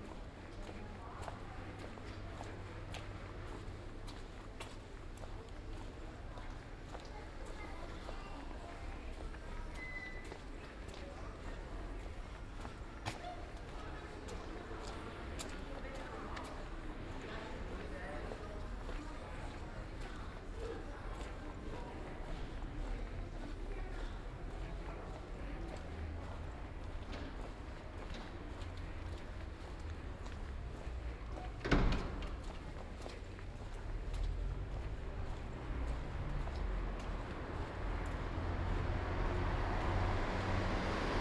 "It’s six o’clock with bells on Thursday in the time of COVID19" Soundwalk
Chapter XXXVIII of Ascolto il tuo cuore, città. I listen to your heart, city
Thursday April 9th 2020. San Salvario district Turin, walking to Corso Vittorio Emanuele II and back, thirty days after emergency disposition due to the epidemic of COVID19.
Start at 5:46 p.m. end at 6:18 p.m. duration of recording 31'44''
The entire path is associated with a synchronized GPS track recorded in the (kmz, kml, gpx) files downloadable here:
9 April 2020, ~18:00